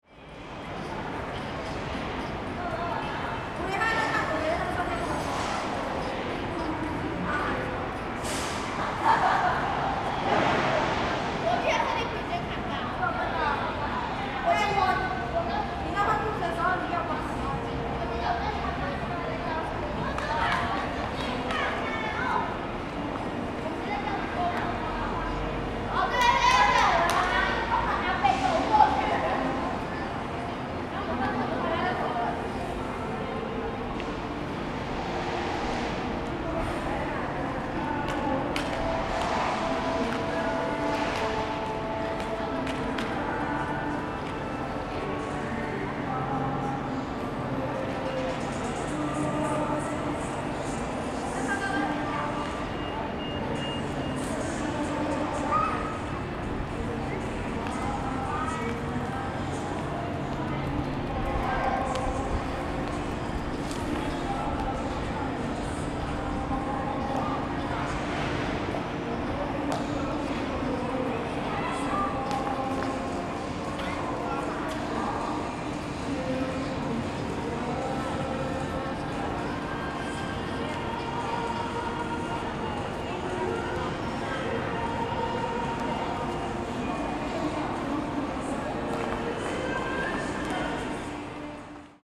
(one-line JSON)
{"title": "Kaohsiung Arena - dance", "date": "2012-02-25 16:42:00", "description": "A group of young students are practicing dancing, Sony ECM-MS907, Sony Hi-MD MZ-RH1", "latitude": "22.67", "longitude": "120.30", "altitude": "8", "timezone": "Asia/Taipei"}